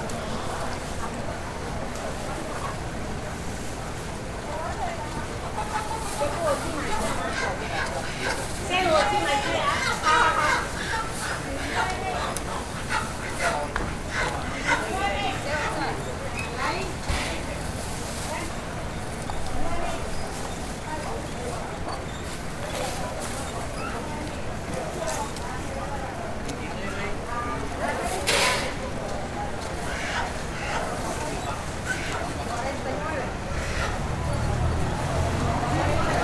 El Dorado, Panamá, Panama - Ambiente mercado chino, domingos
Todos los domingos se crea un mini mercado Chino donde casi todos los Chinos se suplen de alimentos frescos, gallinas vivas, patos vivos, legumbres etc...